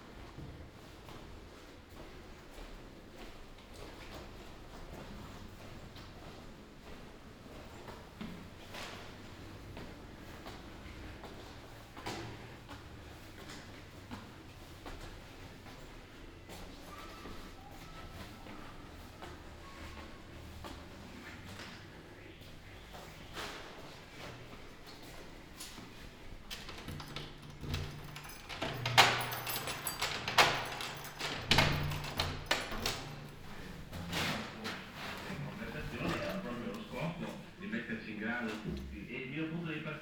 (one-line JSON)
{"title": "Ascolto il tuo cuore, città, I listen to your heart, city, Chapter LXIV - Shopping Saturday afternoon in the time of COVID19 Soundwalk", "date": "2020-05-02 17:19:00", "description": "\"Shopping on Saturday afternoon in the time of COVID19\" Soundwalk\nChapter LXIV of Ascolto il tuo cuore, città, I listen to your heart, city\nSaturday May 2nd 2020. Shopping in district of San Salvario, Turin, fifty three days after emergency disposition due to the epidemic of COVID19.\nStart at 5:19 p.m., end at h. 6:03 p.m. duration of recording 44’20”\nThe entire path is associated with a synchronized GPS track recorded in the (kml, gpx, kmz) files downloadable here:", "latitude": "45.06", "longitude": "7.68", "altitude": "245", "timezone": "Europe/Rome"}